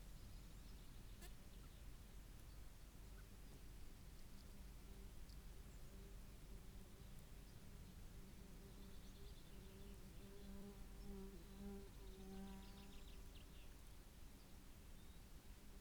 Green Ln, Malton, UK - grubbed out bees nest ...
grubbed out bees nest ... buff tipped bees nest ..? dug out by a badger ..? dpa 4060s in parabolic to MixPre3 ... parabolic on lip of nest ... bird calls ... song ... blackbird ... chaffinch ... skylark ... yellowhammer ... corn bunting ...
Yorkshire and the Humber, England, United Kingdom, 21 June 2021